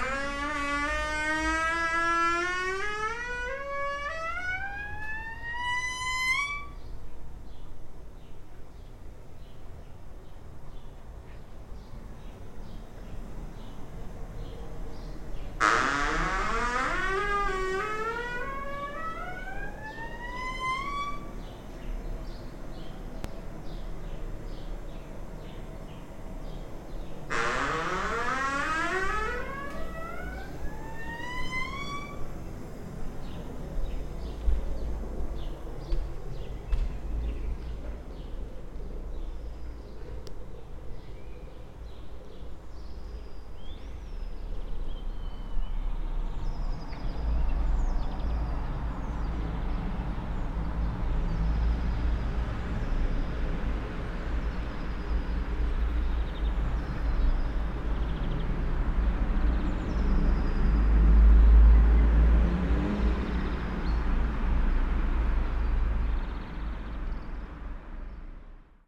while windows are open, Maribor, Slovenia - doors, cafetera, clock
creaking doors, walk from east to west side
12 April 2013